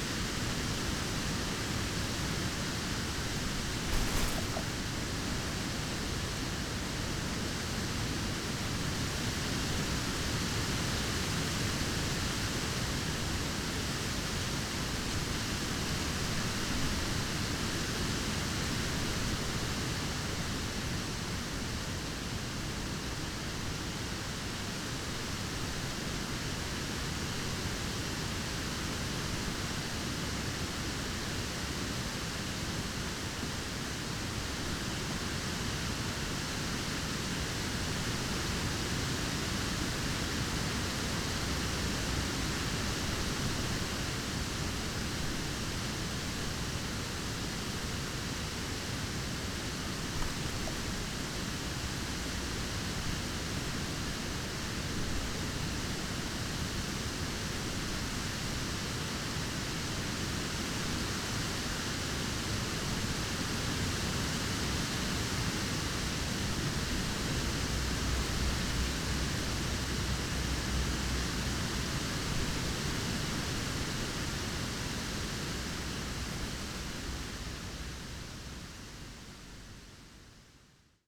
wind rustling through dry leaves which still cling to a tree
the city, the country & me: february 26, 2011
Storkow (Mark), Deutschland, 26 February 2011, 18:17